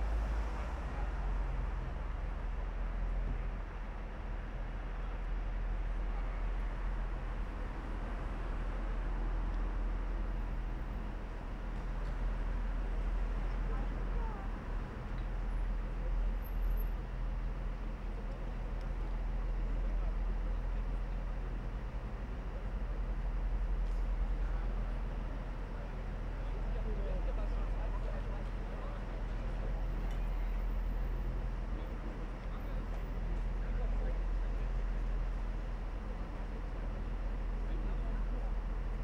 Bhf Süd, Köln - friday night, various traffic
Bahnhof Süd Köln at night, small train station, various traffic: trains, trams, cars and pedestrians
(tech: sony pcm d50, audio technica AT8022)
Cologne, Germany, 9 March 2012, 22:45